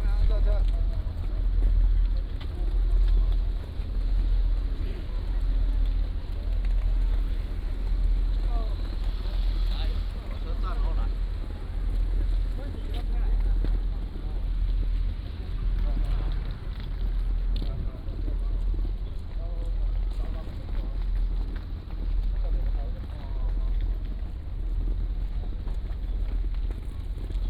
富岡港, Taitung City - Walking in the dock
In the dock, Walking in the dock